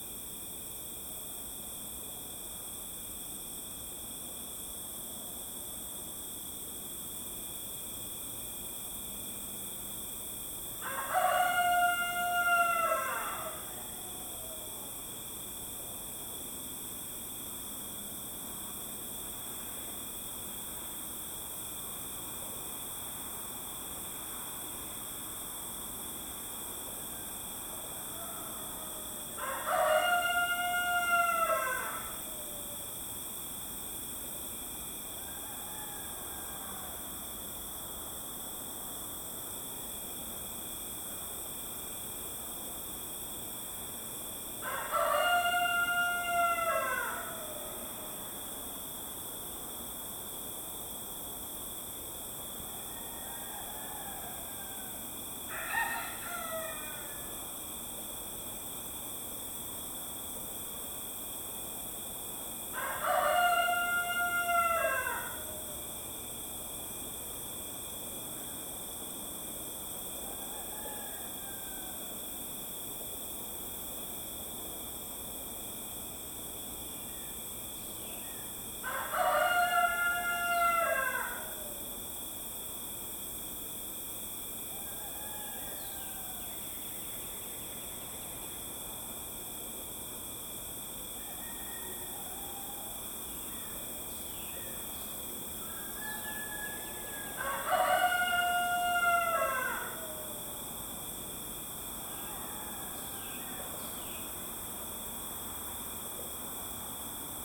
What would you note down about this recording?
Recorded with a Marantz PMD661 and a pair of DPA4060s